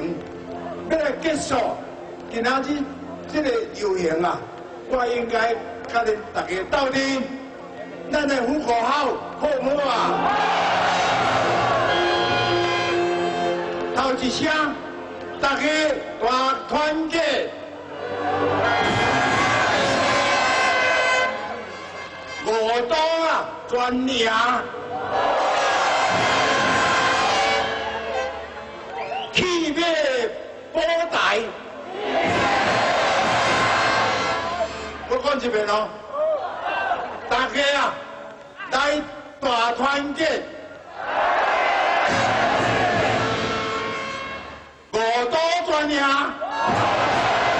Ketagalan Boulevard, Taipei - Protest
Former president is a speech, Sony ECM-MS907, Sony Hi-MD MZ-RH1
台北市 (Taipei City), 中華民國, 26 June 2010, 17:35